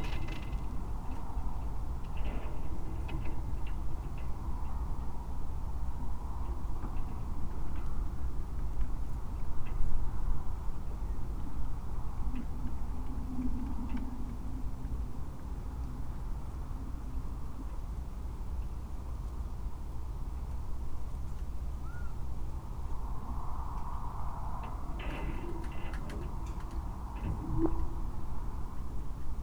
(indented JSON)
{
  "title": "얼음에 갇힌 부두 pier stuck in the ice",
  "date": "2021-01-08 19:00:00",
  "description": "This winter the entire surface of Chuncheon lake froze over substantially for several weeks.",
  "latitude": "37.87",
  "longitude": "127.70",
  "altitude": "73",
  "timezone": "Asia/Seoul"
}